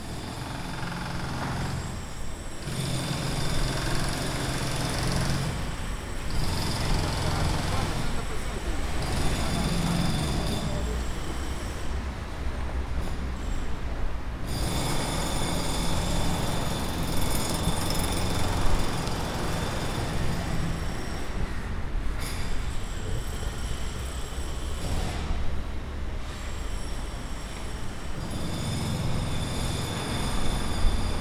Milan, Borgogna Str, construction
morning walk around Milan, construction works, street ambience
Milan, Italy, 23 April 2010, 07:39